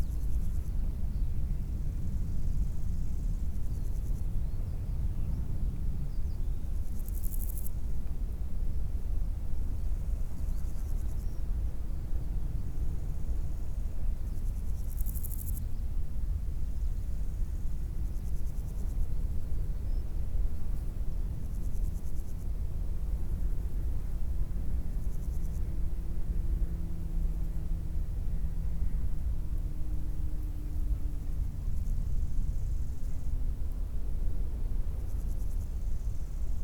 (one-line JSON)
{"title": "Alprech creek", "date": "2009-07-18 15:00:00", "description": "Summer afternoon on a promontory next to the seashore, with crickets, birds, a large plane up high and a toy plane near.", "latitude": "50.69", "longitude": "1.56", "altitude": "17", "timezone": "Europe/Paris"}